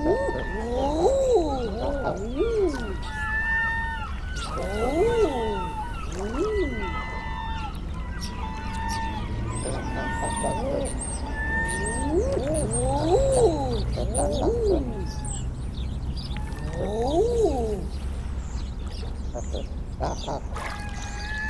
Seahouses, UK - eider ducks ...
Seahouses harbour ... eider ducks calling ... calls from ... herring gulls ... house sparrow ... starling ... black-headed gull ... background noise ... LS 11 integral mics ...
15 November